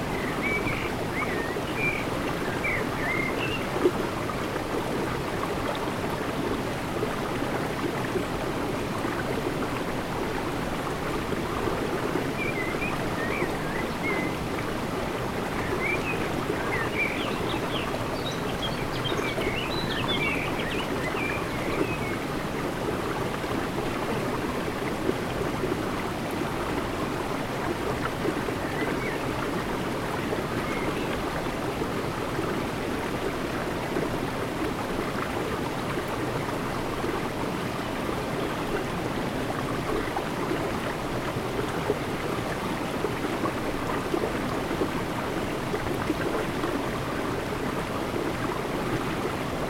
Mnt des Moulins, Serrières-en-Chautagne, France - Eaux vives
Les eaux vives de Lapeyrouse au dessus de Serrières en Chautagne, source d'énergie des moulins d'antan.
Auvergne-Rhône-Alpes, France métropolitaine, France, 3 June 2022, ~17:00